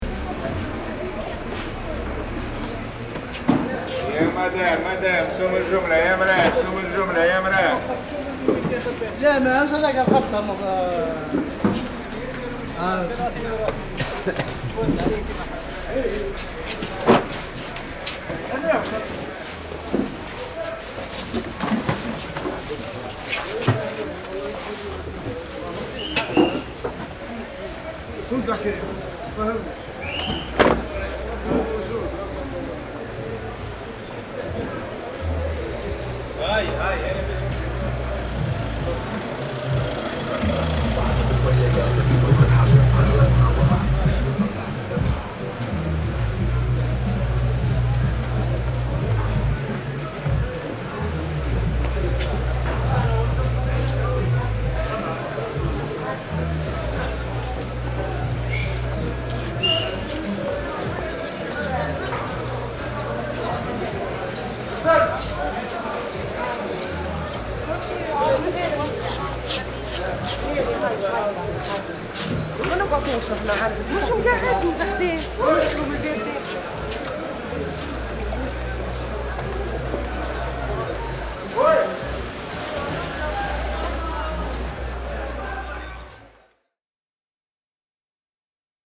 Bab Bhar, Tunis, Tunesien - tunis, rue de espagne, street market
Walking up the street. The sound of the overall street market with different kind of traders at and by the street, while traffic tries to pass by.
international city scapes - social ambiences and topographic field recordings